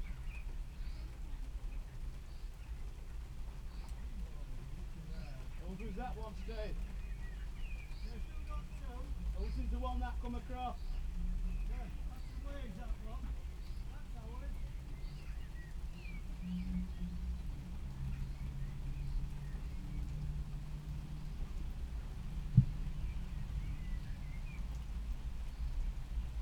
Bridlington Rd, Driffield, UK - sledmere v walkington bowls match ...
sledmere v walkington veterans bowls match ... recorded from the shed ... open lavalier mics clipped to a sandwich box ... initially it was raining ... 13 minutes in and someone uses the plumbing ...
England, UK, 26 June